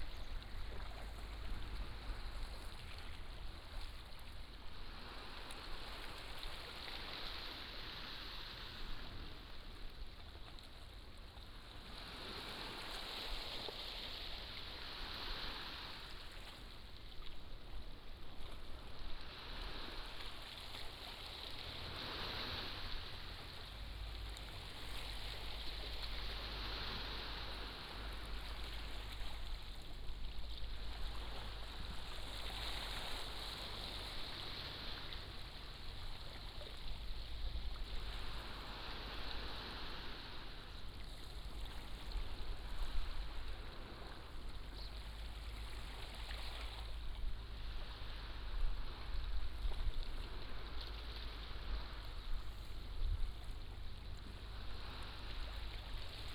馬鼻灣海濱公園, Beigan Township - On the coast
On the coast, Sound of the waves
福建省, Mainland - Taiwan Border, 15 October 2014